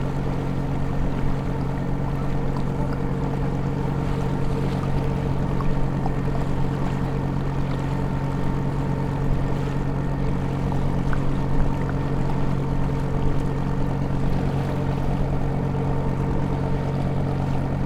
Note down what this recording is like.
Dźwięk nagrany podczas Rejsu w ramach projektu : "Dźwiękohistorie. Badania nad pamięcią dźwiękową Kaszubów".